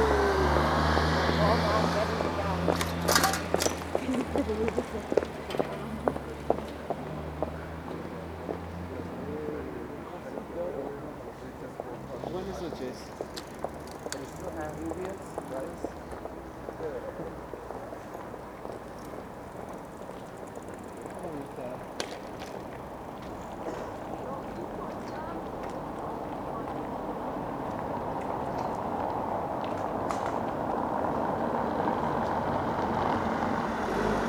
Berlin: Vermessungspunkt Maybachufer / Bürknerstraße - Klangvermessung Kreuzkölln ::: 27.10.2012 ::: 03:49